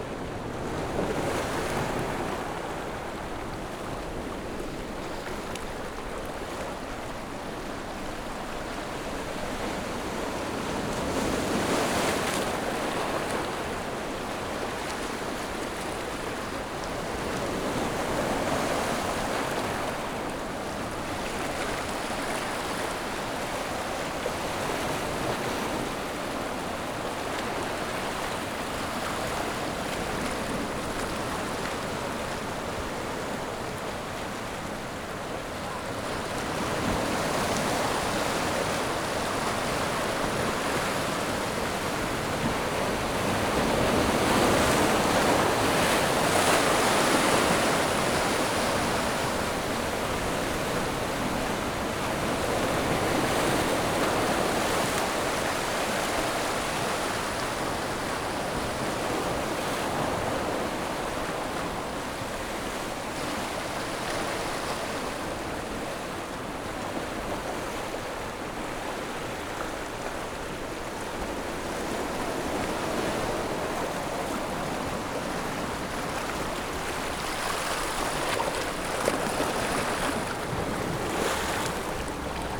三貂角, New Taipei City - Sound of the waves
On the coast, Sound of the waves
Zoom H6 MS mic+ Rode NT4
Gongliao District, New Taipei City, Taiwan, 21 July 2014